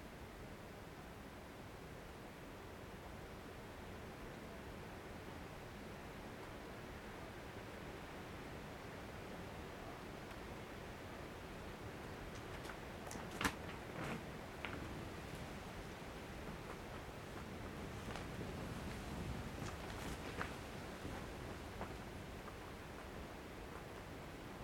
Brakel, Germany - Plastic Greenhouse at Night
01:30 inside a 4x3 meter greenhouse made of plastic sheeting. Microphone placed on the ground on a small tripod approximately 0.5 meters in from the entrance.
The location of the greenhouse is at a family friends house in the immediate area. I didn't geo locate the exact spot for privacy reasons.
Recorded with a Zoom h5 XYH-5 Capsule.